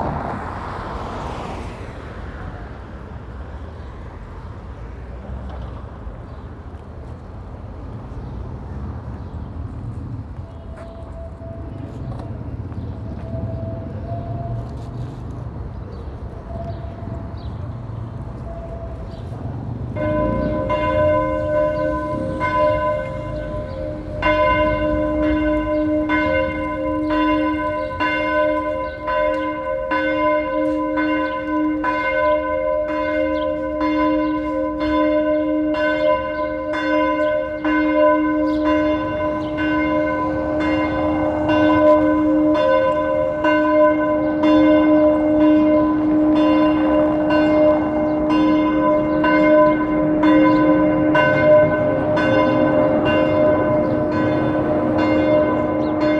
Extract 3: Pankow Church bells, trams, tyres on cobbles. The 5 Pankow Soundwalks project took place during spring 2019 and April 27 2020 was the first anniversary. In celebration I walked the same route starting at Pankow S&U Bahnhof at the same time. The coronavirus lockdown has made significant changes to the soundscape. Almost no planes are flying (this route is directly under the flight path into Tegel Airport), the traffic is reduced, although not by so much, and the children's playgrounds are closed. All important sounds in this area. The walk was recorded and there are six extracts on the aporee soundmap.